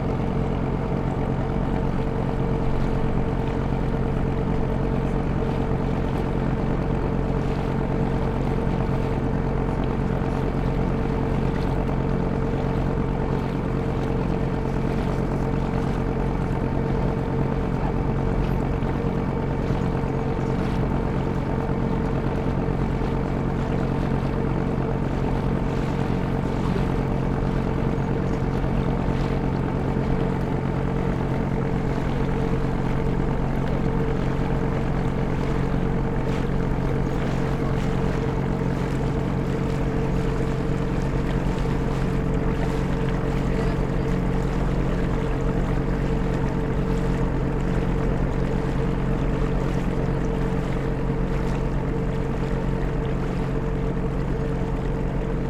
Jezioro Wdzydze - Rejs
Dźwięk nagrany podczas Rejsu w ramach projektu : "Dźwiękohistorie. Badania nad pamięcią dźwiękową Kaszubów".
Wdzydze Kiszewskie, Poland, 13 June, 14:54